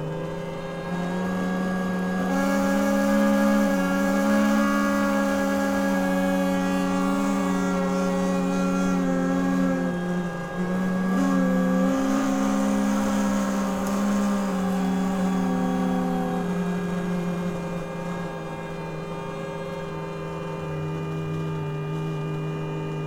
room, Novigrad, Croatia - wind instrument
12 July 2014, 21:59